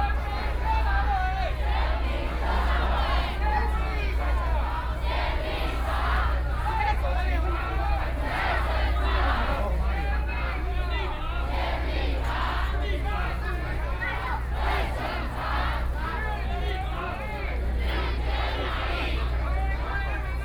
Tamsui District, New Taipei City - Tourists and protest
A lot of tourists, Protest crowd walking through
Please turn up the volume a little. Binaural recordings, Sony PCM D100+ Soundman OKM II